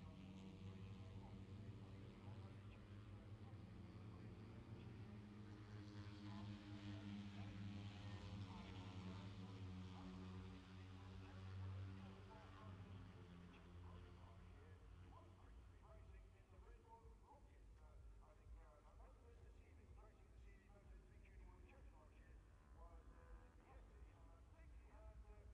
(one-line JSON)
{"title": "Silverstone Circuit, Towcester, UK - british motorcycle grand prix 2019 ... moto three ... fp2 ...", "date": "2019-08-23 13:15:00", "description": "british motorcycle grand prix 2019 ... moto three ... free practice two ... maggotts ... lavalier mics clipped to bag ...", "latitude": "52.07", "longitude": "-1.01", "altitude": "158", "timezone": "Europe/London"}